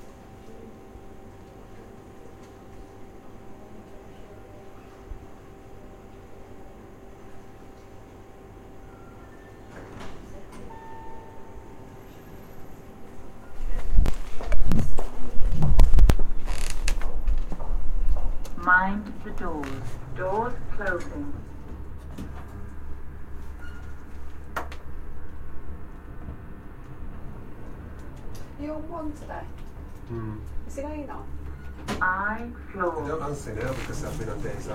Sounds in main corridor of the Royal Hallamshire Hospital in Sheffield near main lifts.